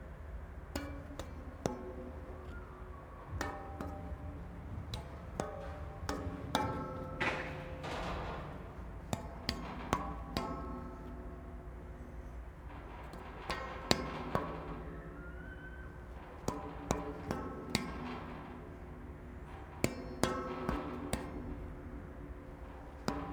Hausleitnerweg, Linz, Austria - Playing the tuneful metal bridge
Somewhat unexpected - a short metal bridge over the brutalist concrete car park entrance is quite tuneful when slapped with the palm of my hand. The autobahn roar accompanies.